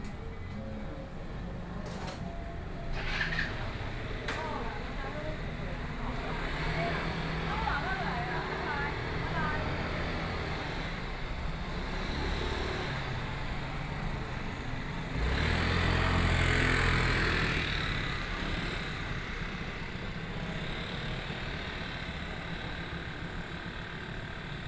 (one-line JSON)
{"title": "Desheng St., New Taipei City - soundwalk", "date": "2013-10-22 17:50:00", "description": "From Temple Square to the night market, After no business in traditional markets, Binaural recordings, Sony PCM D50 + Soundman OKM II", "latitude": "25.08", "longitude": "121.47", "altitude": "11", "timezone": "Asia/Taipei"}